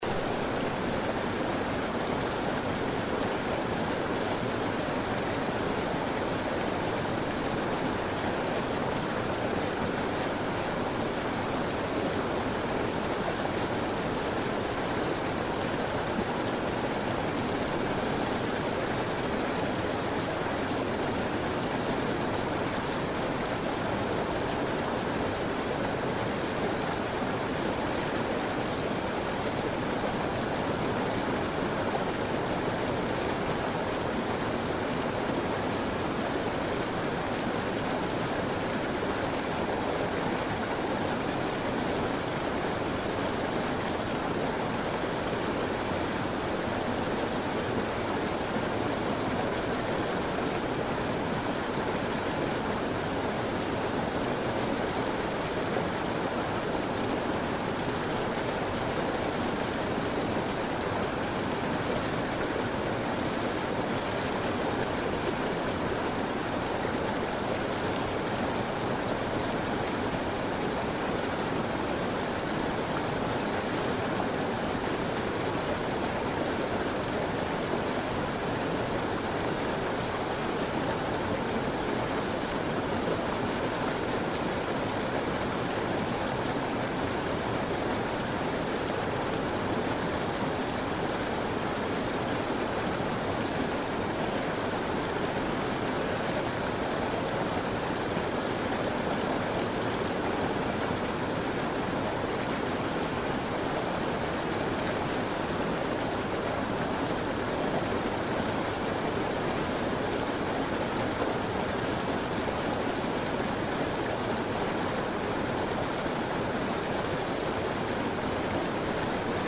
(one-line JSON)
{"title": "cascade de langevin, ile de la reunion", "date": "2010-08-01 10:59:00", "description": "cascade de langevin plan densemble", "latitude": "-21.31", "longitude": "55.64", "altitude": "396", "timezone": "Indian/Reunion"}